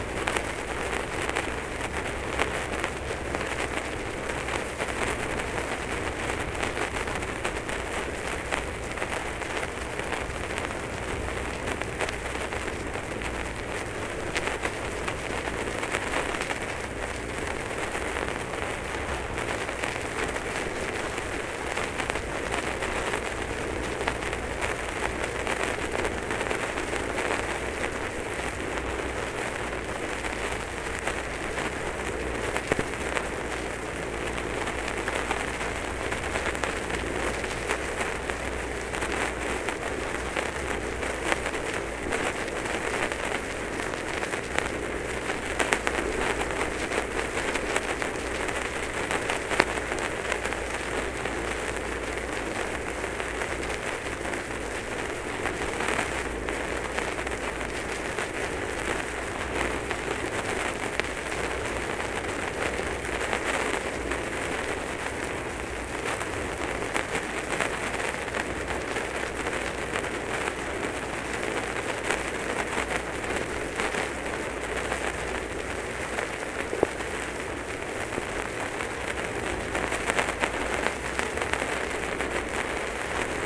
{"title": "Chaumont-Gistoux, Belgique - Strange resurgence", "date": "2016-08-15 15:20:00", "description": "In this pond, where water is very calm and clear, there's an underwater resurgence. It provokes a small mud geyser. I put a microphone inside. It's simply astonishing ! No, it's not an old gramophone record, it's not the sound of my feet when I'm driving by bike like a crazy, it's not a bowel movement... It's an unmodified sound underwater of a strange resurgence inside the mud. Why is it like that ? Perhaps an pressure coming from the nearby river ? Unfortunately no explanation.", "latitude": "50.68", "longitude": "4.68", "altitude": "99", "timezone": "Europe/Brussels"}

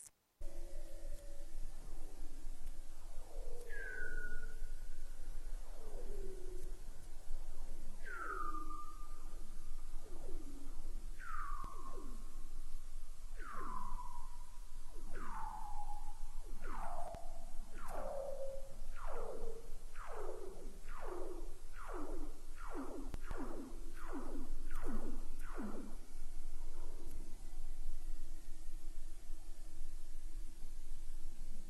{"title": "Neumayer-Station - Antarctic underwater soundscape - Livestream recording from PALAOA ::: 24.11.2007 16:18:21", "date": "2007-11-25 15:22:00", "latitude": "-70.64", "longitude": "-8.26", "timezone": "Europe/Berlin"}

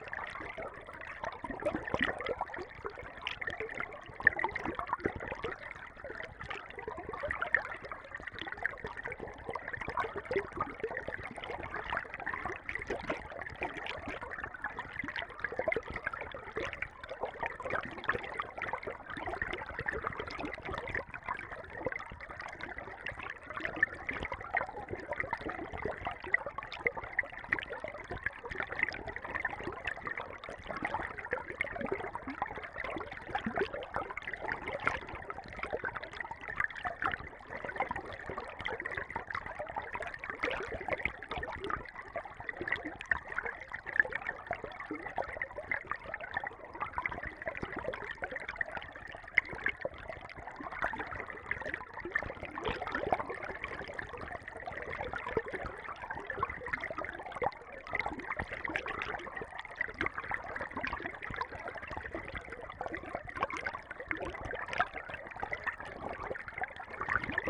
{"title": "River Urslau, Hinterthal, Austria - River Urslau (hydrophone recording)", "date": "2015-07-21 14:00:00", "description": "Stereo hydrophones in the lovely clear, cold, shallow River Urslau. The very next day this was a muddy torrent after storms in the mountains. Recorded with JrF hydrophones and Tascam DR-680mkII recorder.", "latitude": "47.41", "longitude": "12.97", "altitude": "998", "timezone": "Europe/Vienna"}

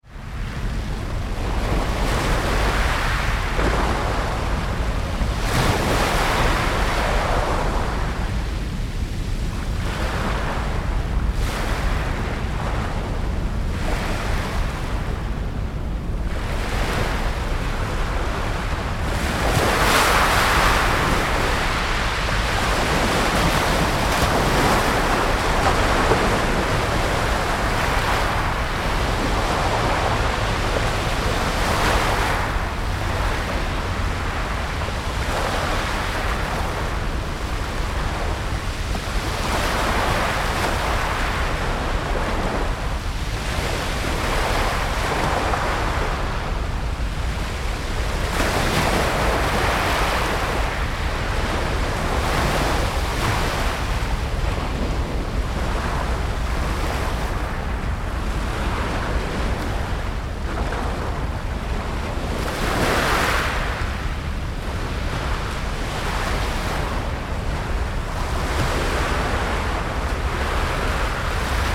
{"title": "Dover Beach", "date": "2009-09-29 21:48:00", "description": "Surf at the cobblestone beach of Dover with the rumbling of the ferryboats' engines at the Eastern Docks in the background.", "latitude": "51.12", "longitude": "1.32", "altitude": "6", "timezone": "Europe/Berlin"}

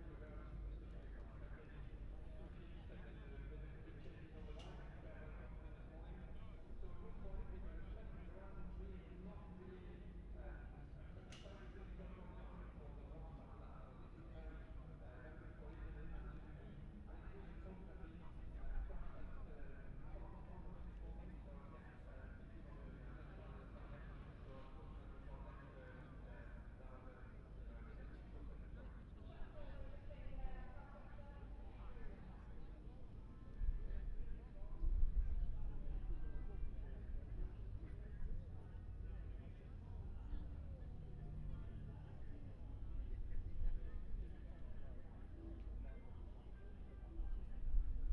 {"title": "Silverstone Circuit, Towcester, UK - british motorcycle grand prix 2021 ... moto grand prix ...", "date": "2021-08-28 14:10:00", "description": "moto grand prix qualifying one ... wellington straight ... olympus ls 14 integral mics ...", "latitude": "52.08", "longitude": "-1.02", "altitude": "157", "timezone": "Europe/London"}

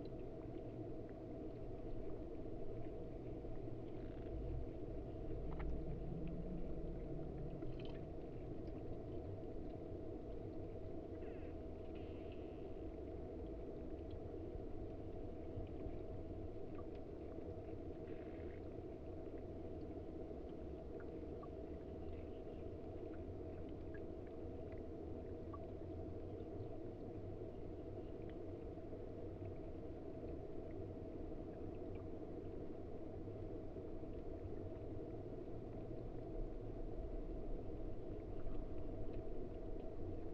{"title": "Old Lock near Houtrustweg, Den Haag - hydrophone rec underneath the lock", "date": "2009-04-29 18:40:00", "description": "Mic/Recorder: Aquarian H2A / Fostex FR-2LE", "latitude": "52.08", "longitude": "4.27", "altitude": "7", "timezone": "Europe/Berlin"}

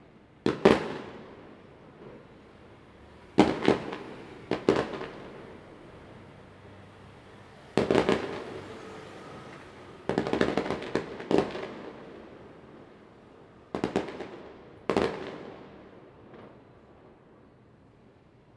{"title": "Daren St., Tamsui District - Firecrackers and fireworks", "date": "2015-04-19 14:30:00", "description": "Firecrackers and fireworks\nZoom H2n MS +XY", "latitude": "25.18", "longitude": "121.44", "altitude": "45", "timezone": "Asia/Taipei"}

